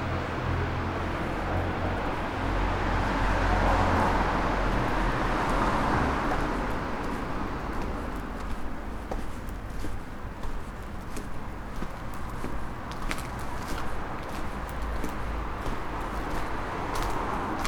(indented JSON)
{
  "title": "gospejna ulica, maribor - friday evening, walking",
  "date": "2014-08-14 21:12:00",
  "latitude": "46.56",
  "longitude": "15.64",
  "altitude": "271",
  "timezone": "Europe/Ljubljana"
}